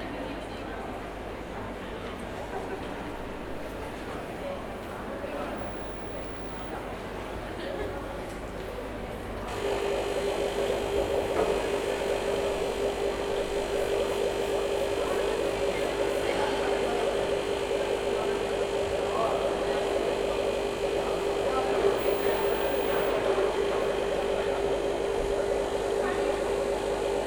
Background Sound, Sage Gateshead - 10:15PM
Some background ambience I recorded after a performance of Pierrot Lunaire at the Sage Gateshead. Enjoy :)
Gateshead, UK, 20 March 2011, 22:15